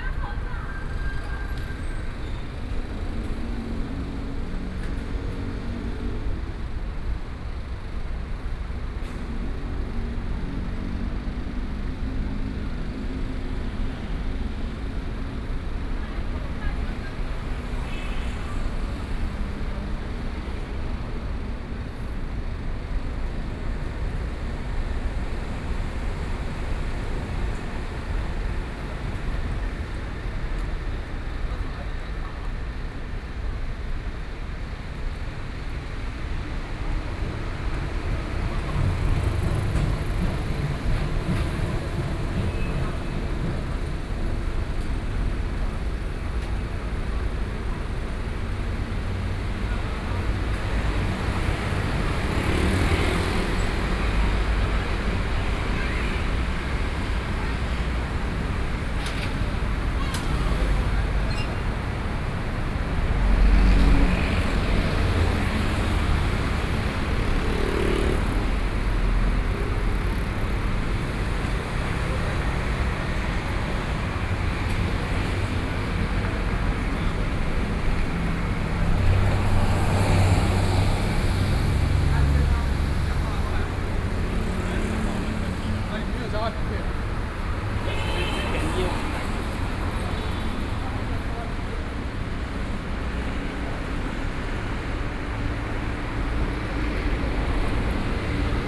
Neihu District - Traffic noise
Corner at the intersection, Sony PCM D50 + Soundman OKM II